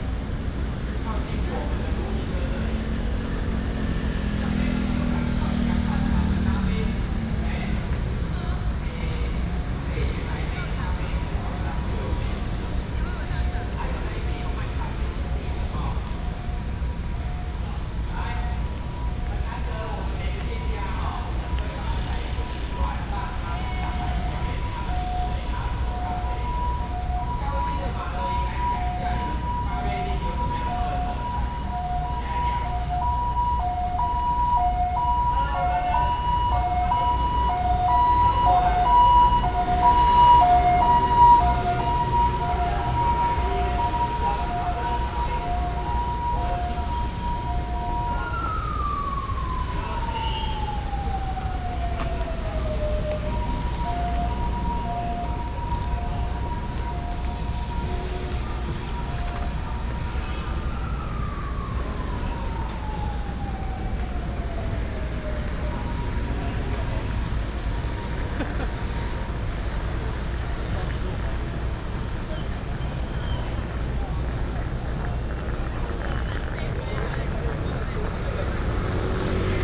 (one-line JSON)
{"title": "Guangfu Rd., Yilan City, Yilan County - At the bus station", "date": "2016-11-18 17:32:00", "description": "At the bus station, Traffic sound, ambulance", "latitude": "24.75", "longitude": "121.76", "altitude": "14", "timezone": "Asia/Taipei"}